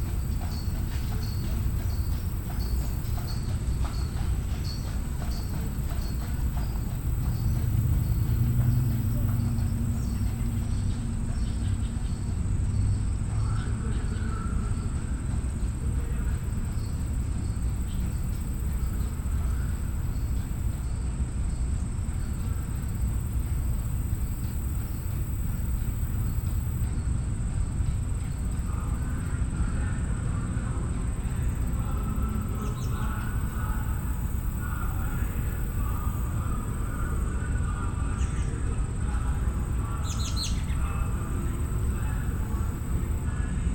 Dwight D. Eisenhower Presidential Library, Museum and Boyhood Home, S E 4th St, Abilene, KS - Plaza (Distant Concert & Museum Echo)
Near the museum, a horse and carriage passes, followed by strains of music from a concert held during the Trails, Rails & Tales festival. A slight echo can be heard, reflected from the southwest corner of the museum. Stereo mics (Audiotalaia-Primo ECM 172), recorded via Olympus LS-10.